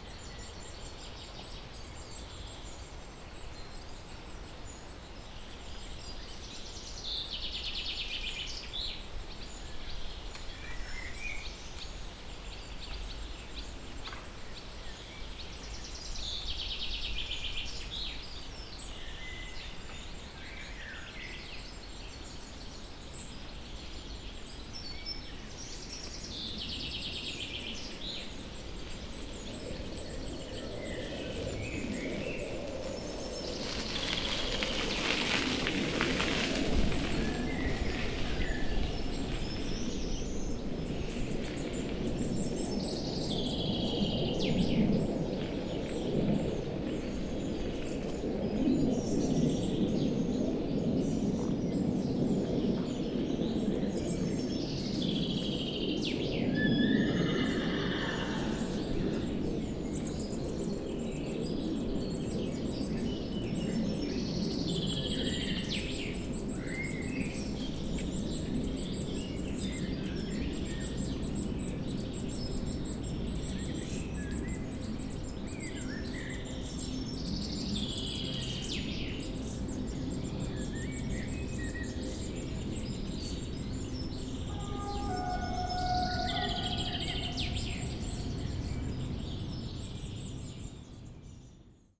Strzeszyn, bike road along Bogdanka stream - tree near horse riding club
while riding the bike a crackling tree caught my attention. it didn't crackle for long but I was able to records some neighing of the horses nearby as well as a few bikers as well as always intriguing bird chirps. another plane takes off from the airport 3km away and another train passes on the tracks about 500m away (sony d50)